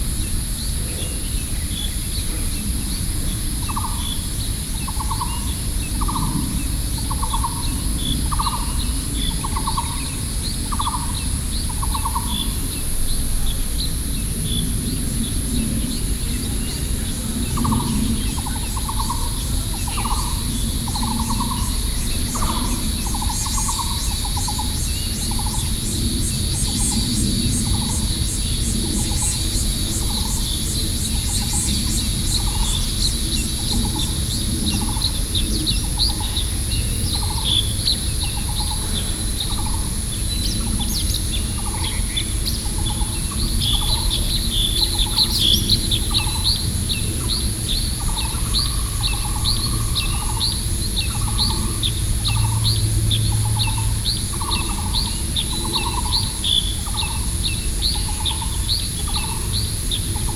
Beitou, Taipei - In the temple square
Environmental sounds, In the temple square, Sony PCM D50 + Soundman OKM II
台北市 (Taipei City), 中華民國, 22 June 2012, 07:05